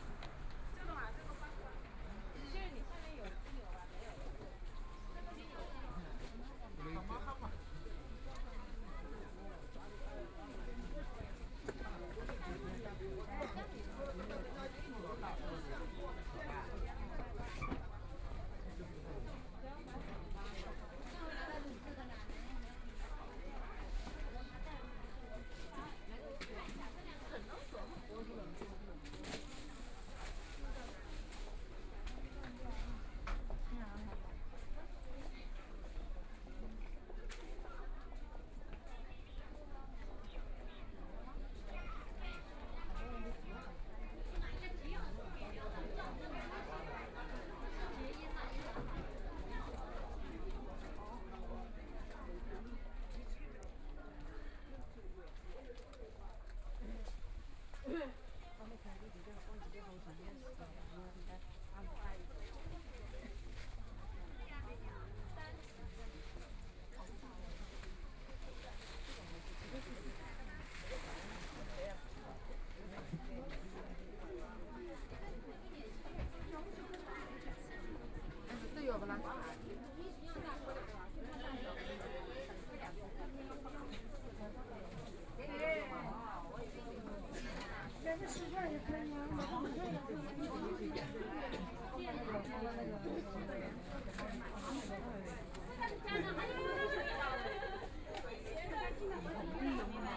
{"title": "Fu You Lu, Huangpu District - Market Building", "date": "2013-11-21 14:58:00", "description": "walking in the Market Building, Binaural recording, Zoom H6+ Soundman OKM II", "latitude": "31.23", "longitude": "121.48", "altitude": "7", "timezone": "Asia/Shanghai"}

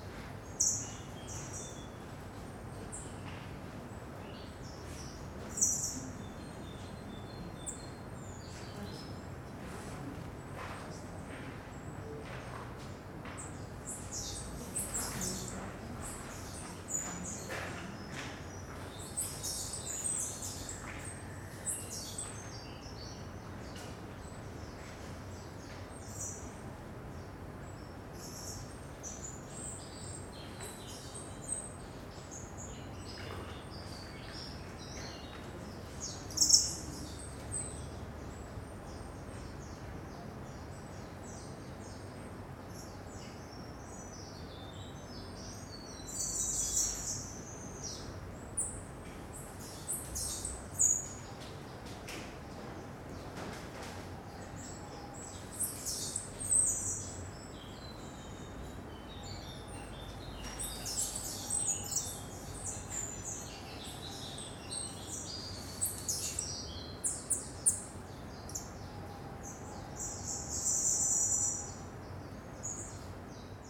{"title": "Schonbrunn desert house birds, Vienna", "date": "2011-08-18 12:10:00", "description": "small birds circulate freely in the desert house at Schonbrunn", "latitude": "48.18", "longitude": "16.30", "altitude": "197", "timezone": "Europe/Vienna"}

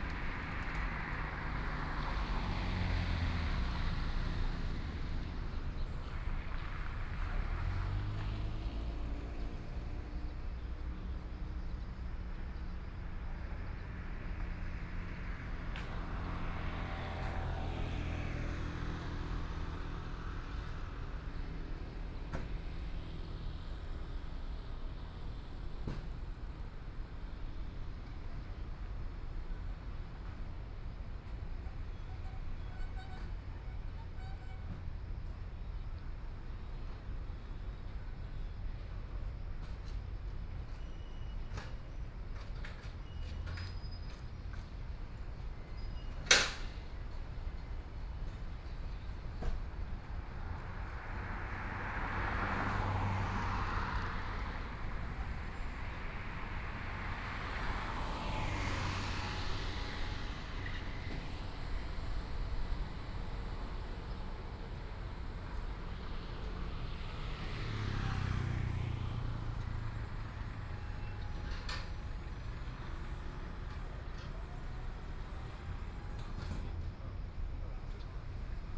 {"title": "Nongquan Rd., Yilan City - Trains traveling through", "date": "2014-07-22 11:12:00", "description": "Traffic Sound, Trains traveling through, Next to the railway\nSony PCM D50+ Soundman OKM II", "latitude": "24.75", "longitude": "121.76", "altitude": "9", "timezone": "Asia/Taipei"}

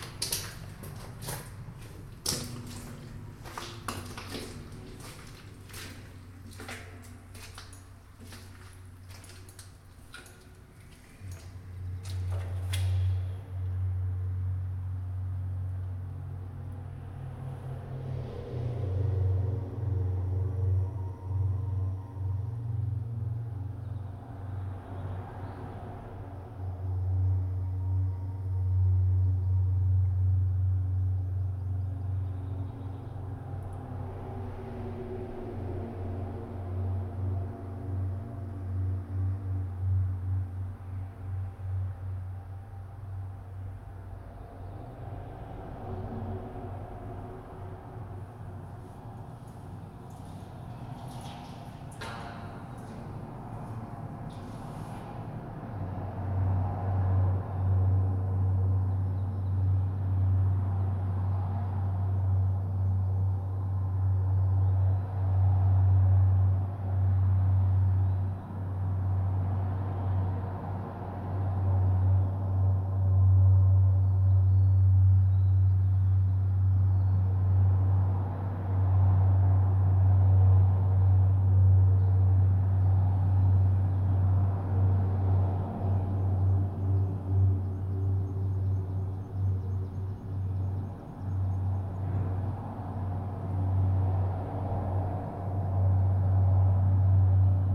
{"title": "Shap, UK - Tunnel Resonance", "date": "2022-05-07 16:08:00", "description": "traffic and voice resonating in a narrow tunnel under the M6 motorway. Recorded with a Zoom H2n", "latitude": "54.51", "longitude": "-2.65", "altitude": "300", "timezone": "Europe/London"}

